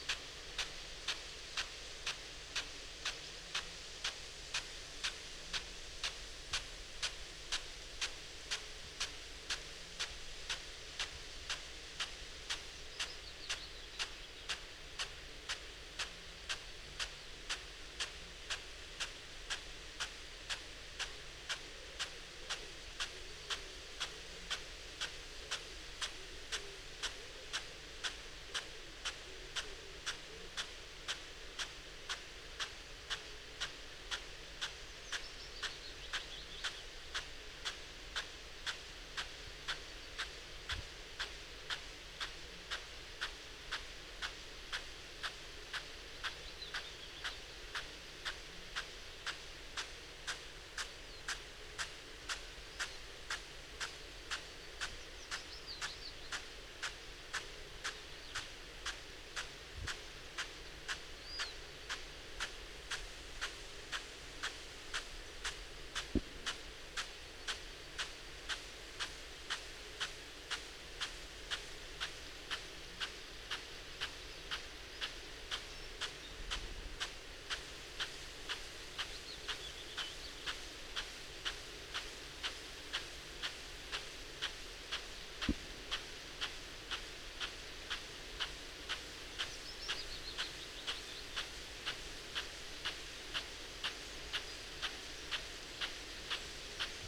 Croome Dale Ln, Malton, UK - field irrigation system ...
field irrigation system ... parabolic ... a Bauer SR 140 ultra sprinkler to Bauer Rainstart E irrigation unit ... what fun ...